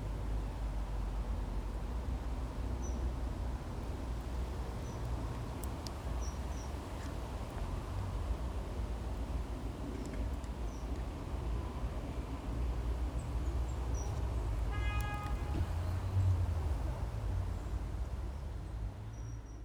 Anderlecht, Belgium - Quiet by the community garden
In this part of Brussels one walks thought a fast changing succession of sonic places, streets, hidden cul-de-sacs, closed gardens, cobbles, open spaces and busier. This one of the quietest spots. Even the gravel of the path makes little sound when you walk.
15 October 2016, 16:25